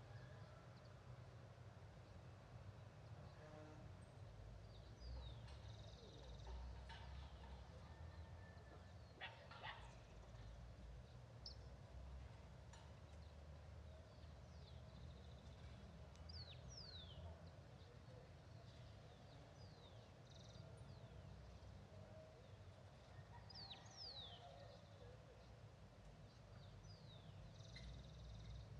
Cl., Bogotá, Colombia - Ambiente Parque Cedro Golf
In this ambience you can perceive the nature that surrounds the groups in the labyrinth behind the show place, a place full of lots of green areas.
Región Andina, Colombia